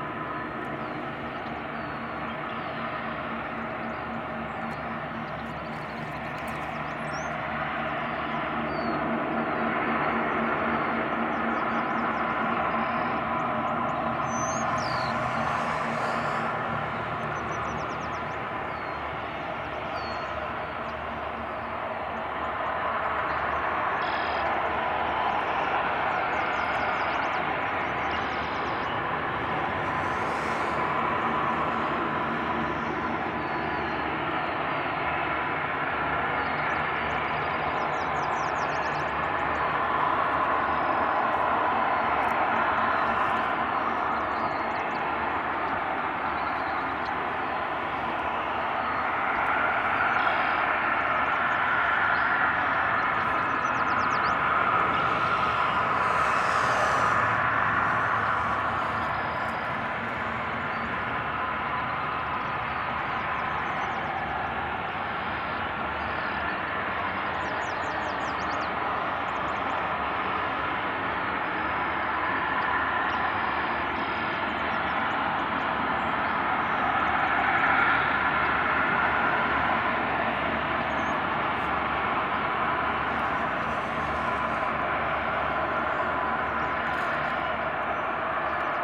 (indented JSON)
{
  "title": "Kewaunee Nuclear Power Plant - Kewaunee Nuclear Plant Shut Down",
  "date": "2013-05-07 11:50:00",
  "description": "On May 7, 2013 @ 11:15am, the Kewaunee nuclear power plant generated its last megawatt. Steam blowoff began shortly afterwards, producing the constant hissing sound in this recording. At over 500 degrees F, this pressurized vapor billowed out from vents around the base of the cooling tower for nearly 24 hours. Turbines stopped. The conducting power lines radiating outwards, strung high above surrounding dairy farms, went dead. The plant was taken off the grid forever. The radioactive waste will take months to be placed into cooling pools. By 2019, the radioactive fuel will be encased in temporary storage casks. Unless a permanent waste burial site is opened in America, this material will be buried here for the indefinite future, slowly shedding radioactive energy for millions of years. As with all decommissioned nuclear sites, this place will outlast almost every other manmade object on Earth, long after our extinction as a species. Behold another monument to the Anthropocene.",
  "latitude": "44.34",
  "longitude": "-87.54",
  "altitude": "179",
  "timezone": "America/Chicago"
}